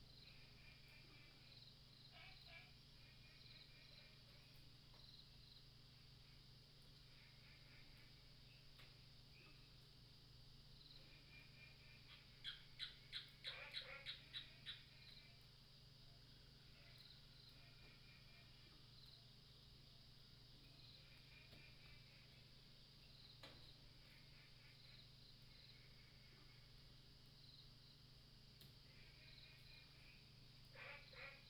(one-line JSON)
{"title": "埔里鎮桃米里, Nantou County - Mountain night", "date": "2015-04-28 23:49:00", "description": "Birdsong, Frogs chirping", "latitude": "23.94", "longitude": "120.92", "altitude": "503", "timezone": "Asia/Taipei"}